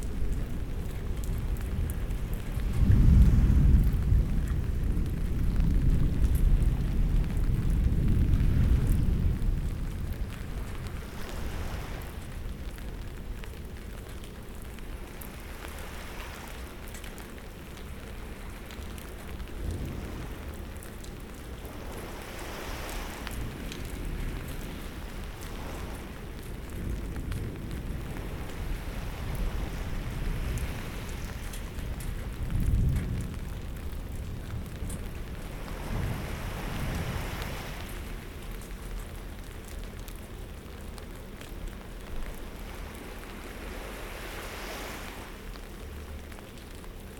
Sestri Levante, Metropolitan City of Genoa, Italie - Rain and thunderstorm and sea at the Bay of Silence
Under an umbrella, in front of the sea. Binaural sound.
Sous un parapluie, en face de la mer. Son pris en binaural.